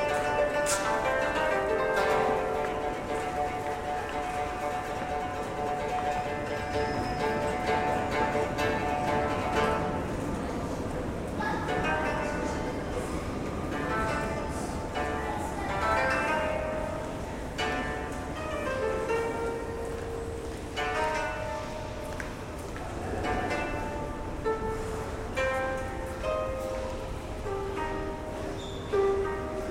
metro koto
juL : inside the metro station of pere lachaise, a koto player creating a contrasted ambience withe the surrounding casual sounds. time for a sharawadji effect...